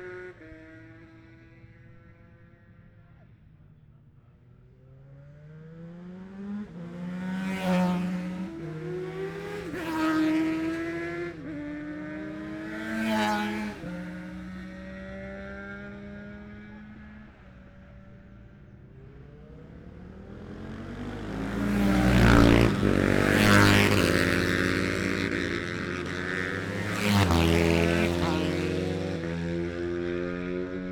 Jacksons Ln, Scarborough, UK - olivers mount road racing 2021 ...
bob smith spring cup ... ultra-lightweights practice ... luhd pm-01 mics to zoom h5 ...